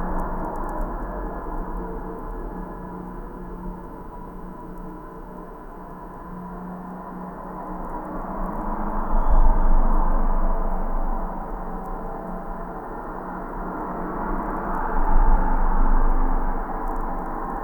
highway traffic recorded with geophone and electromagnetic antenna